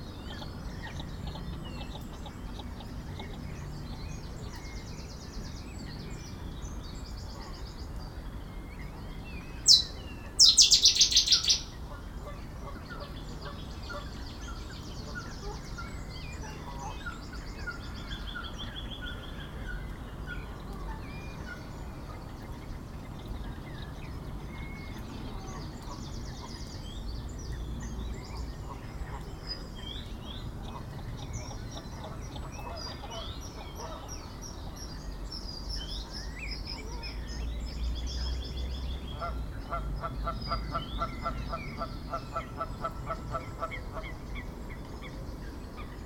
Huldenberg, Belgium - Grootbroek swamp
Grootbroek is a swamp and a pond, located in Sint-Agatha-Rode and Sint-Joris-Weert. I immediately thought that the place must be charming : all that life abounding in the swamps ! I was wrong. Indeed many birds were present on the pond, but another kind of bird was there : the plane. This place is absolutely drowned by the Zaventem takeoffs. I went there very early on the morning only for Grootbroek. I said to myself : do I leave immediately ? No, I must talk about this horror, the great nature and the carnage airport. Ornithologists have to know it, the main volatile here is the plane. Sounds on the pond : Canada geese, Mallard ducks, Kingfisher, Waterfowl, Common Moorhen, Eurasian Coot, Mute swans. On the woods : Common Chiffchaff, Common Chaffinch, Common Blackbird. There's an unknown bird, very near each time, probably a Meadow Pipit.
29 March, 06:25